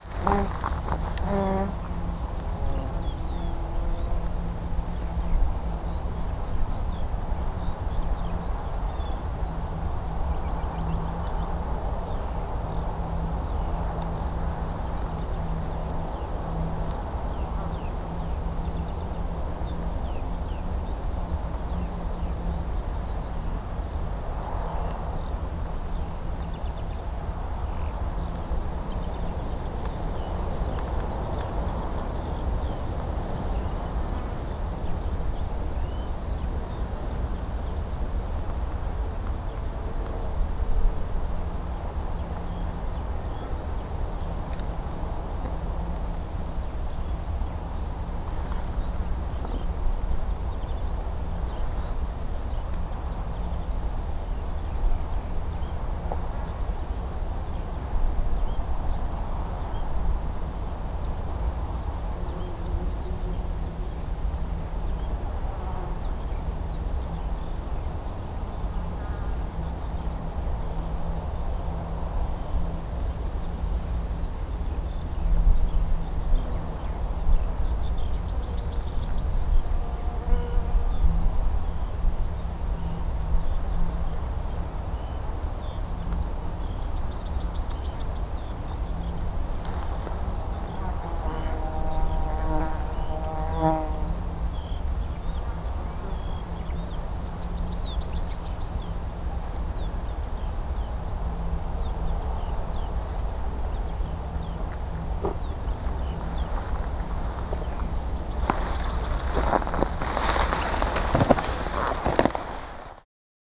Florac, Dolmen de la Pierre Plate